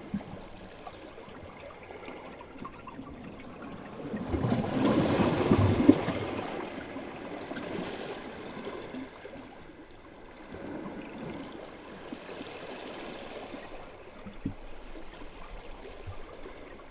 Port Charles, Waikato, New Zealand - Port Charles Ocean Rocks, New Zealand
Ocean waves going through rocks close to the coast of Stony Bay. You can also hear the cicadas from the mountain close by.
Recorded with ZoomH4 in stereo.